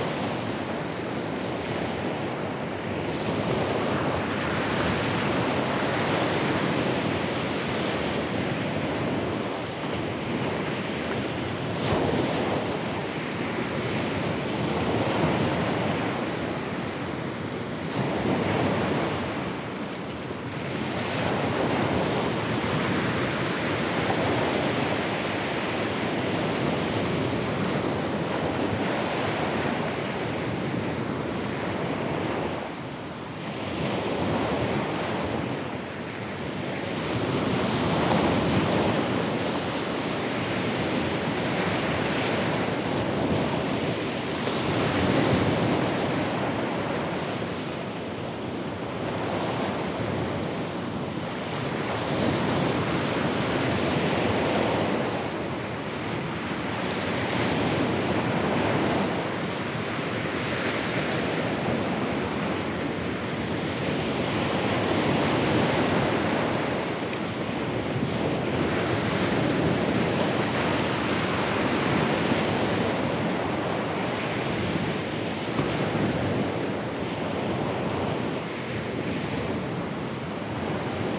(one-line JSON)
{"title": "Roll forward wave, sandy beach, Russia, The White Sea. - Roll forward wave, sandy beach.", "date": "2015-06-21 23:50:00", "description": "Roll forward wave, sandy beach.\nНакат волны. Песчаный пляж.", "latitude": "63.91", "longitude": "36.93", "timezone": "Europe/Moscow"}